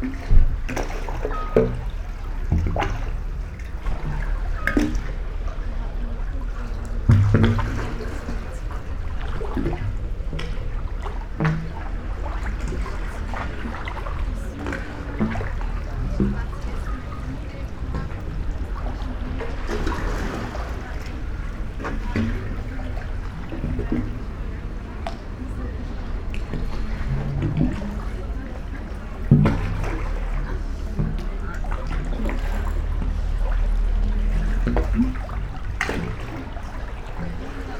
sounds of sea and night walkers, little owl, walk inside of concrete pool